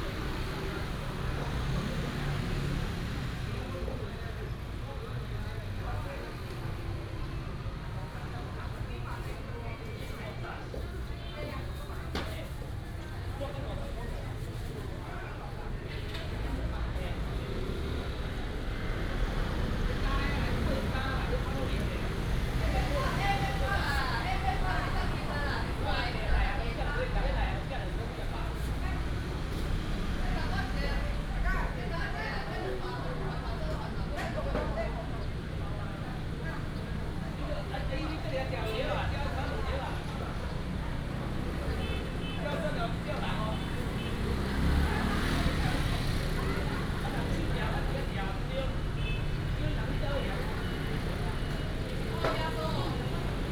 田中公有零售市場, Tianzhong Township - At the corner of the market
in the traditional market, Traffic sound
Changhua County, Taiwan, 6 April